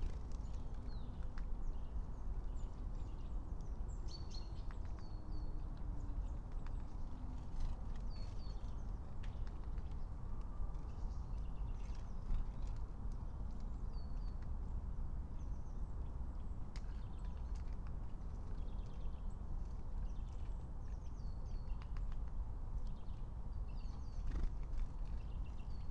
08:15 Berlin, Königsheide, Teich - pond ambience
Deutschland, 16 January 2022, ~08:00